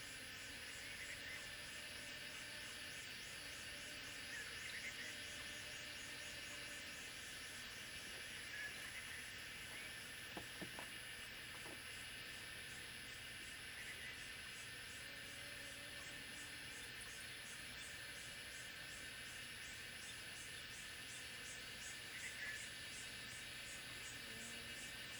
草楠濕地, 埔里鎮桃米里, Taiwan - Cicada and birds sounds
Bird sounds, Cicada sounds
Zoom H2n MS+XY
Puli Township, Nantou County, Taiwan, 7 June, 12:13